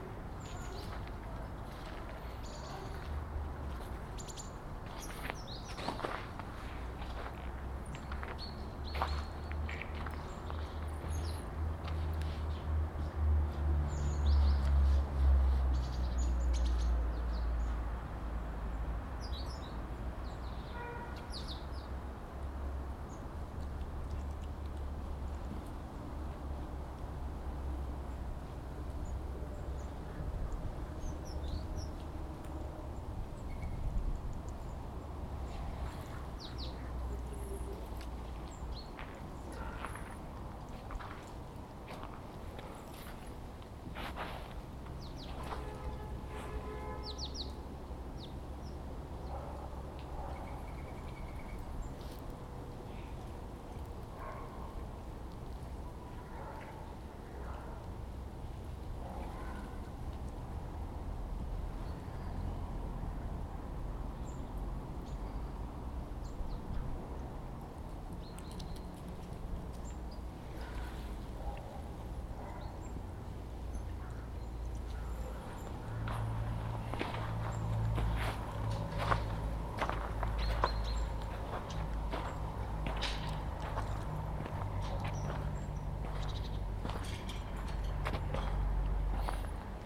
ул. Трудовая, дом, г, Костянтинівка, Донецька область, Украина - Пение синиц во время снега
Синицы, шелест крыльев голубей и порывы ветра. Звуки людей, машин и шагов по снегу